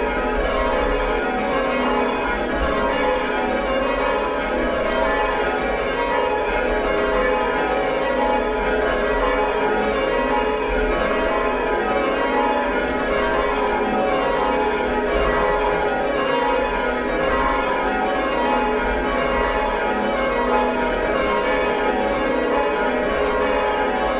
University of Oxford, University Offices, Wellington Square, Oxford, UK - Oxford Society of Change Ringers ringing the bells down at the end of their ringing practice
This was recorded inside the bell tower at Lincoln College, Oxford, where there are 8 bells, dating from the 1600s. They are not very big bells, and they sound very different outside the tower from inside it! I was at the bell-ringing practice of the Oxford Society of Change Ringers and I learnt that at the start of the practice all of the bells must be rung up (that is, they must be rung so that their 'mouths' are facing upwards;) and that at the end of the practice all of the bells must be rung down again (that is, they must be rung so that their 'mouths' are facing down.) I am only monitoring on laptop speakers, so it's hard to hear whether or not the recording preserves the same sense that I had while in the room, of the bells above us gradually turning over until they were ringing downwards, but that is what was happening in this recording.